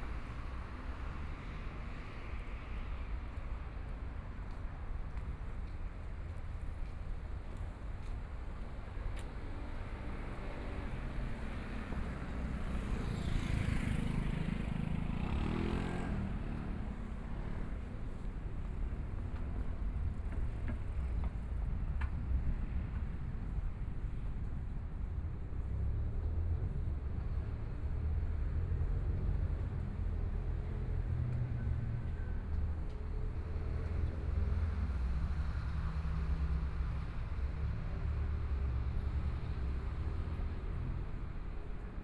新生公園, Taipei EXPO Park - Walking through the park
Walking through the park, Traffic Sound, Aircraft flying through, Sunny afternoon
Please turn up the volume a little
Binaural recordings, Sony PCM D100 + Soundman OKM II
Zhongshan District, Taipei City, Taiwan, 28 February